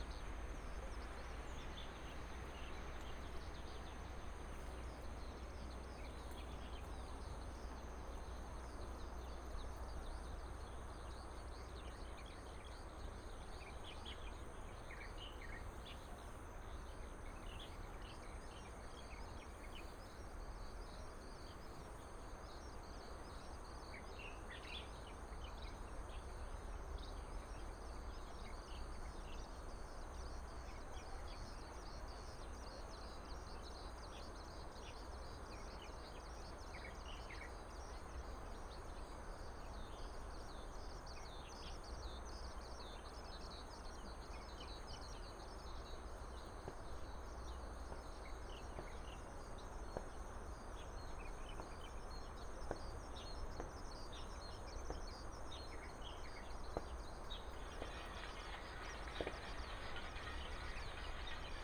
太麻里鄉金崙林道, Taitung County - Facing the village
Stream sound, Beside the river embankment, Facing the village, Insect cry, Bird cry, Shotgun sound, Dog barking, Chicken crowing
Taitung County, Taimali Township, 金崙林道, April 2018